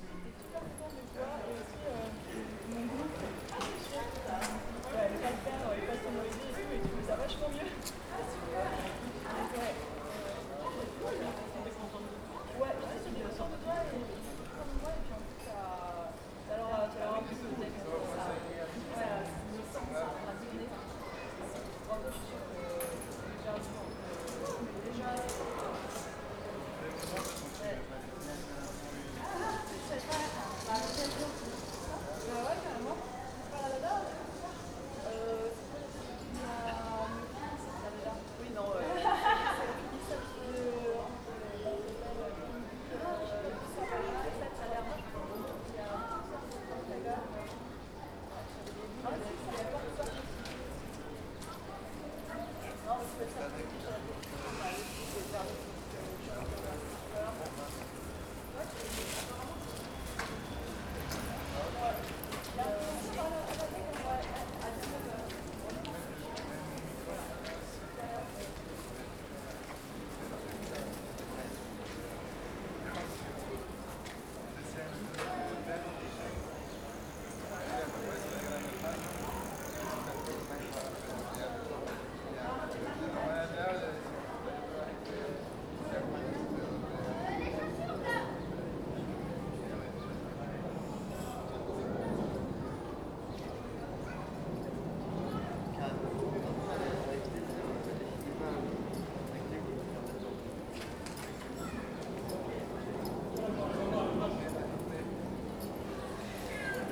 Rue de la Boulangerie, Saint-Denis, France - Intersection of R. du Jambon + R. de la Boulangerie
This recording is one of a series of recording, mapping the changing soundscape around St Denis (Recorded with the on-board microphones of a Tascam DR-40).
25 May, 11:55